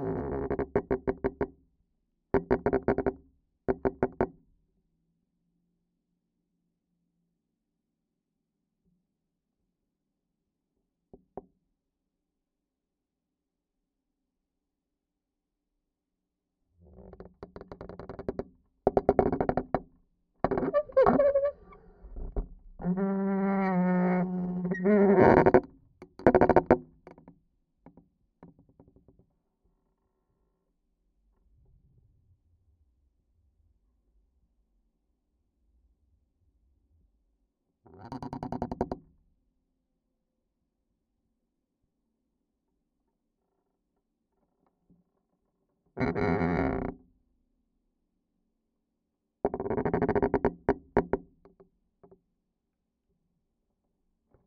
Utena, Lithuania, the lock on abandoned hangar
contact microphones on the lock of abandoned hangar...
July 2018